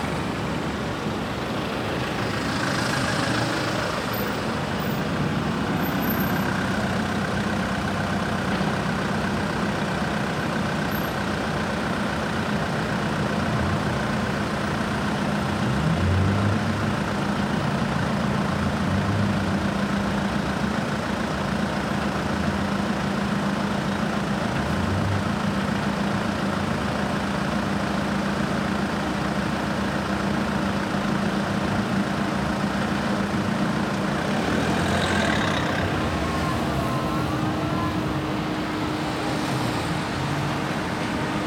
Prinzenallee, Soldiner Kiez, Wedding, Berlin - Prinzenallee - Traffic jam
Prinzenallee - Stau auf der Prinzenallee.
Prinzenallee - Traffic jam.
[I used a Hi-MD-recorder Sony MZ-NH900 with external microphone Beyerdynamic MCE 82]